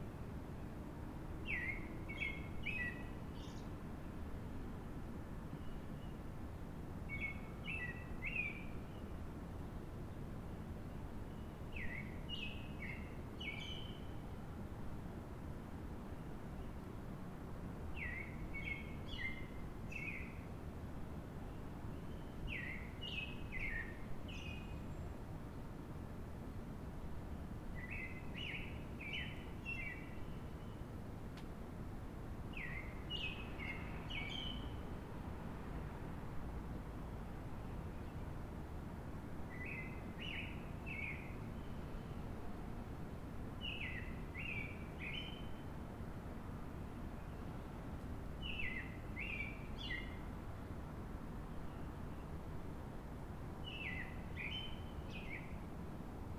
Middlesex Fells Reservation, Medford, MA, USA - Birds
Bird call to another distant bird. The hum of the highway I-93.